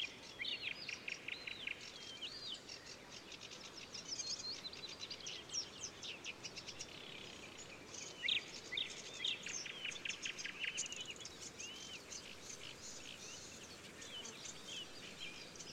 Bikuskis, Lithuania, at the pond
soundscape at the pond